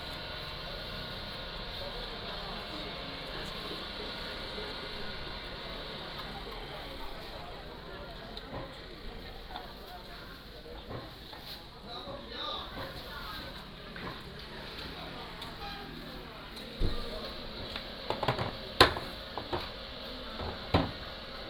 介壽獅子市場, Nangan Township - In the market
In the market, Traffic Sound
連江縣, 福建省 (Fujian), Mainland - Taiwan Border, October 2014